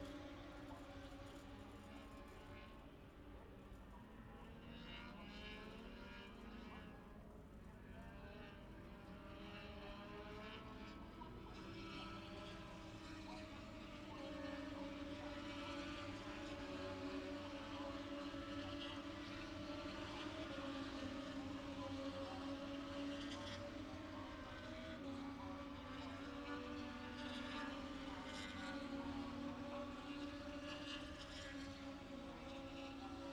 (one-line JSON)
{"title": "Silverstone Circuit, Towcester, UK - british motorcycle grand prix 2019 ... moto two ... fp2 ...", "date": "2019-08-23 15:10:00", "description": "british motorcycle grand prix 2019 ... moto two ... free practice two ... maggotts ... lavalier mics clipped to bag ... bikes often hitting their rev limiter ...", "latitude": "52.07", "longitude": "-1.01", "altitude": "158", "timezone": "Europe/London"}